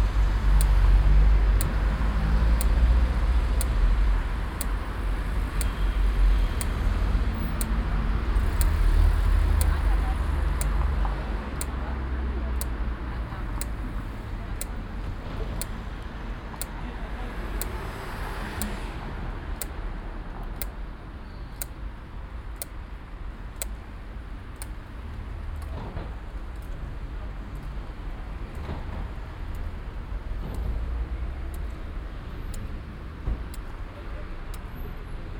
cologne, hansaring, ampelanlage
ampelanlage, signalklicken, verkehr
project: social ambiences/ listen to the people - in & outdoor nearfield recording